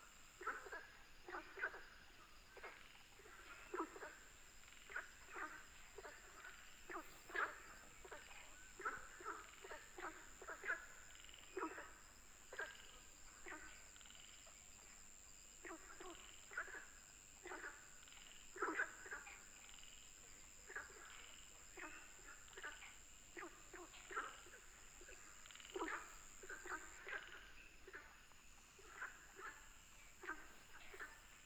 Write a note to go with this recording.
Frogs chirping, Firefly habitat area